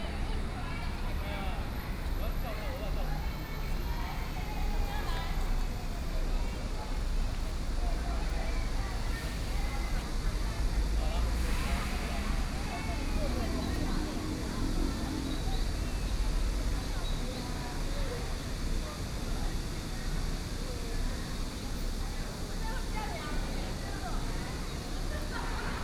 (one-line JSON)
{"title": "Diu Diu Dang Forest, Yilan City - Sitting on the Square", "date": "2014-07-05 08:44:00", "description": "Sitting on the Square, Very hot weather, Many tourists\nSony PCM D50+ Soundman OKM II", "latitude": "24.75", "longitude": "121.76", "altitude": "11", "timezone": "Asia/Taipei"}